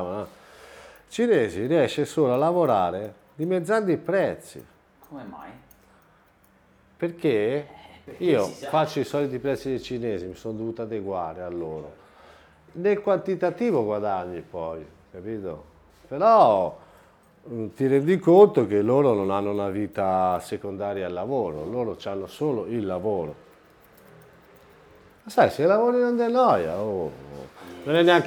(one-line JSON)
{"title": "Via Palestro, Massa MS - Il barbiere", "date": "2017-08-11 16:30:00", "description": "Mentre taglia i capelli a un suo cliente storico, Giovanni, il barbiere della borgata, racconta i meccanismi economici della concorrenza dei barbieri cinesi. Preso dal discorso si distrae, e taglia la basette allo storico cliente, che desiderava invece lasciarle lunghe.", "latitude": "44.04", "longitude": "10.14", "altitude": "63", "timezone": "Europe/Rome"}